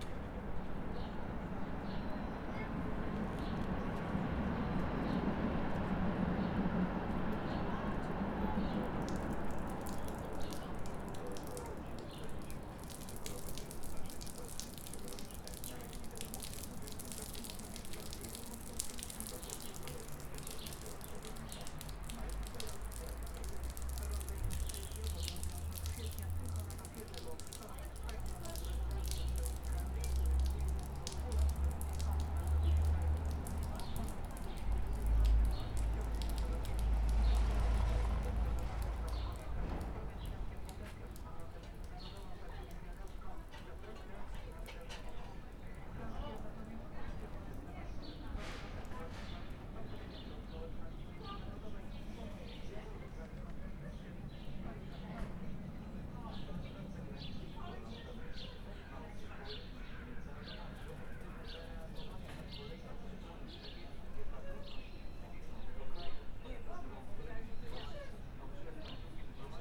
Wroclaw, Old Town district, backyard - downtown backyard
sounds coming from many open windows in the apartment building, people listening to the radio, having dinner, cleaning windows
Wrocław, Poland, 26 May 2012, 17:25